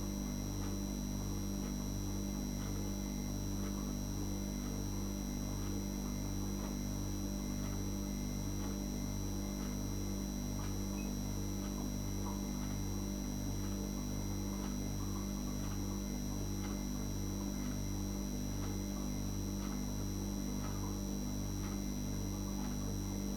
Maribor, Medvedova, Babica
night time at grandma house, fridge and clock sounds
Maribor, Slovenia, 20 November